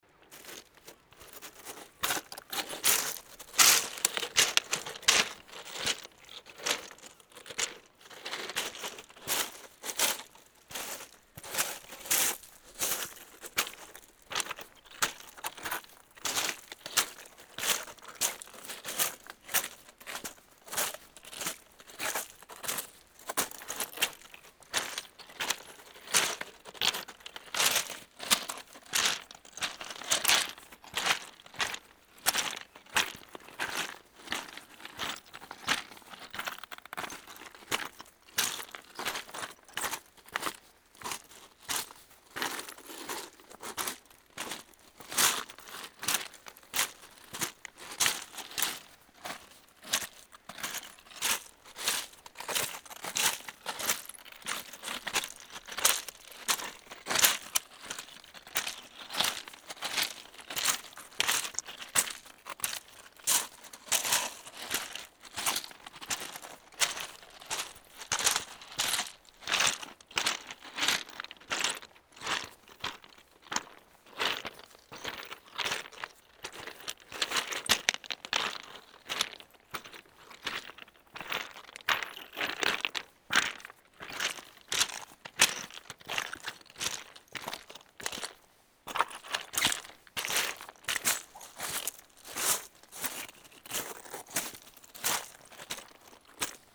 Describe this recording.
Walking on the big pebbles of the Penly beach near the small village called Berneval.